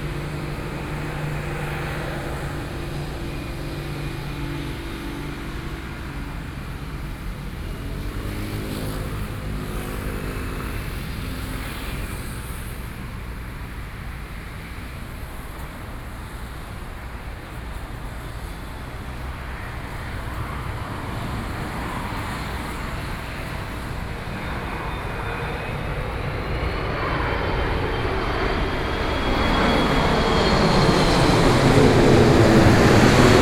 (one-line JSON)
{"title": "Zhongshan N. Rd., Zhongshan Dist., Taipei City - Aircraft flying over", "date": "2012-12-09 13:35:00", "latitude": "25.07", "longitude": "121.52", "altitude": "3", "timezone": "Asia/Taipei"}